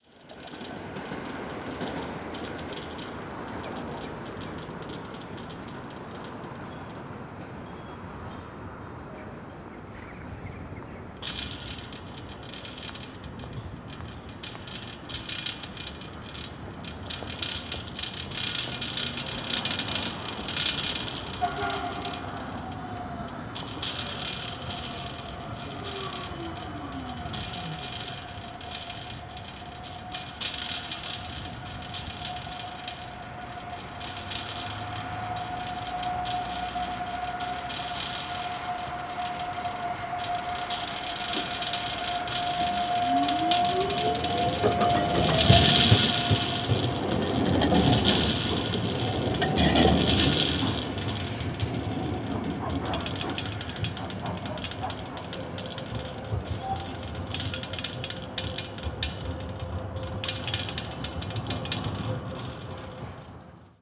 a small metal plate attached to a construction fence, rattling in the wind